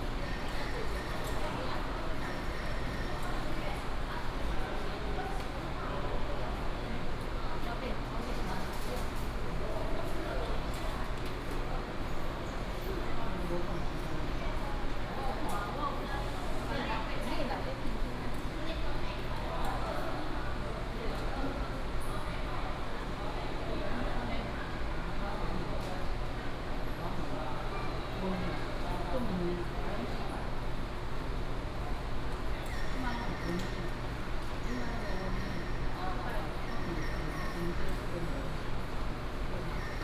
Keelung Station, Taiwan - In the station hall
In the station hall
Keelung City, Taiwan